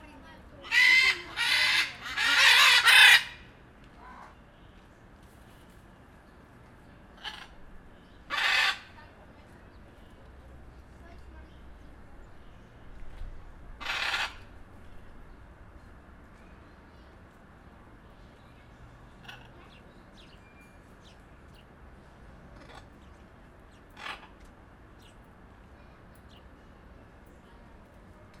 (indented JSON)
{
  "title": "Jardin des Plantes, Paris, France - Ara blue et jaune, Jardin des Plantes",
  "date": "2014-08-18 13:10:00",
  "description": "Recording of Blue-and-yellow macaws.\nAra blue et jaune (Ara ararauna)",
  "latitude": "48.85",
  "longitude": "2.36",
  "altitude": "31",
  "timezone": "Europe/Paris"
}